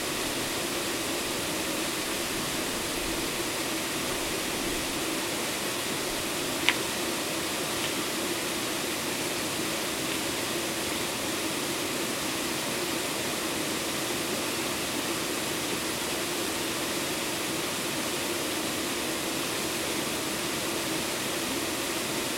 вулиця Черняховського, Вінниця, Вінницька область, Україна - Alley12,7sound19 SabarivskaHPP
Ukraine / Vinnytsia / project Alley 12,7 / sound #19 / Sabarivska HPP
27 June 2020, 15:56